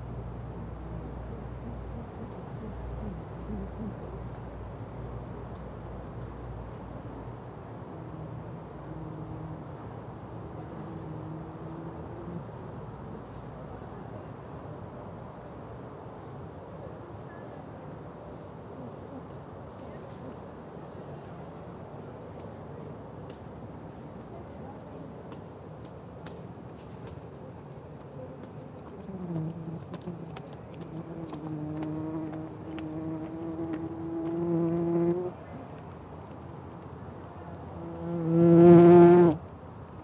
Oxford Brookes University - Headington Campus, Headington Campus, Headington Hill, Oxford, Oxfordshi - Mining bees going in and out of their burrow
Towards the end of a soundwalk that myself and a colleague were leading as part of a field recording course, our little group ran into an apiarist who had been setting up a beehive in the University grounds as part of an architectural research programme. He was very talkative about this project and I was tired, so am ashamed to say that I zoned out from what he was saying. I was sort of idly staring into space and not really listening, when I noticed that a number of lovely fat, fuzzy bees were going in and out of a tiny hole in the soil. I think they are mining bees. I watched closely for a little while while the apiarist (oblivious!) carried on talking loudly about his research. I realised there was a hole close to where the bees were moving in and out of the ground which I could poke one of my omni-directional microphones into, and so I did this, and listened closely while what seemed to be three bumble bees came and went out of their wee dwelling in the ground.